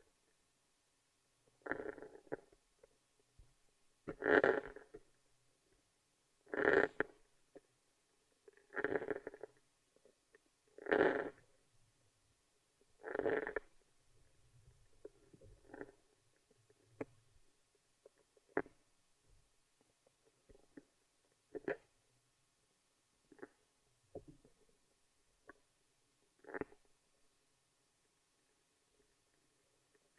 Utena, Lithuania, underwater sound
hydrophone in the swamp
19 August 2013